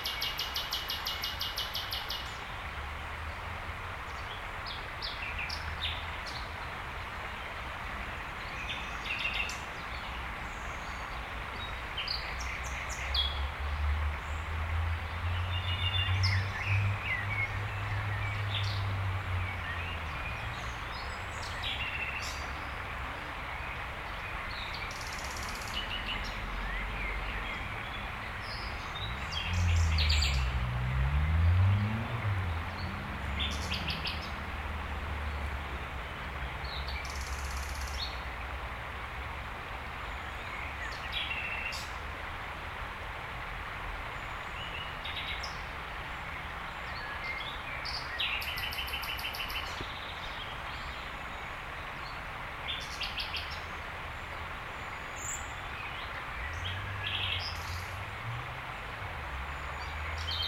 Rte de l'École du Tremblay, La Motte-Servolex, France - Près de la Leysse
Entre la Leysse et l'Avenue Verte. Un rossignol chante sous un grand platane.